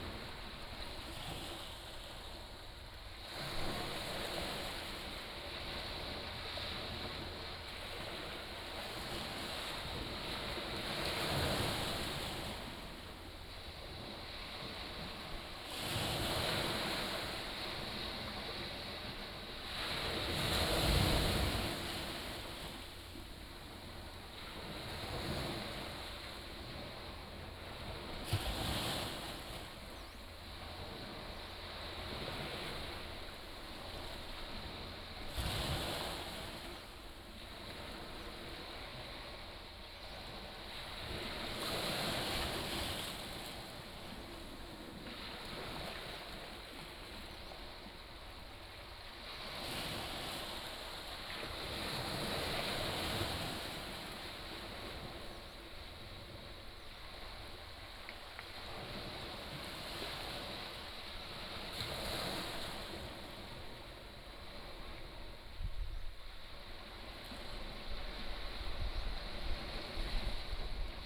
白沙村, Beigan Township - Sound of the waves
Small pier, Sound of the waves
福建省, Mainland - Taiwan Border, October 2014